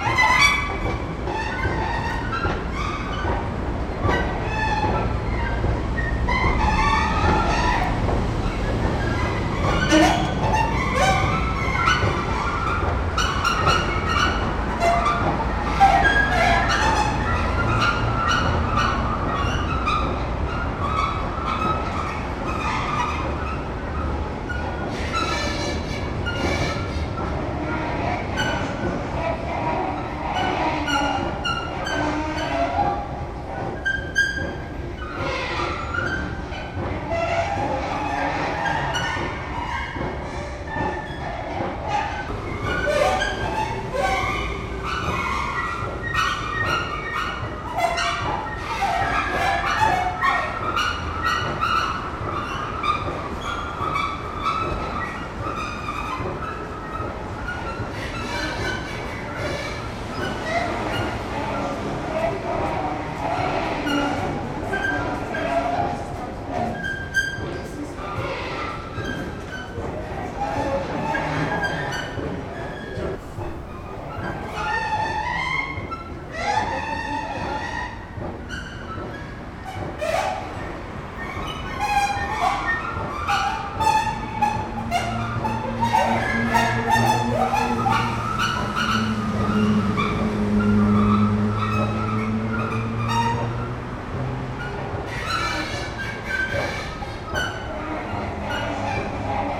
Lindower Str., Berlin, Deutschland - The Escalator Acts Up/Die Rolltreppe spielt auf
S-Bahn station Berlin Wedding. At noon. Many people come out of the subway, drive up the escalator in a glass-roofed room to the S-Bahn, others go down the steps next to it to the subway. In between is the busy Müllerstraße. All the sounds come from this escalator. I walk around and take the stairs. Most people react unmoved to the sounds as if it were everyday life in Berlin. Three hours later, the escalator is noiselessly rhythmic again.
S-Bahn Station Berlin Wedding. Mittags. Viele Leute kommen aus der U-Bahn, fahren die Rolltreppe in einem glasüberdachten Raum zur S-Bahn hoch, andere gehen die Stufen daneben zur U-Bahn runter. Dazwischen die vielbefahrene Müllerstraße. Die Geräusche kommen alle nur von dieser einen Rolltreppe. Ich umlaufe und befahre die Treppe. Die meisten Menschen reagieren unbewegt auf die Geräuschkulisse, als sei das Alltag in Berlin. Drei Stunden später ist die Treppe wieder geräuschlos rhythmisch.
Berlin, Germany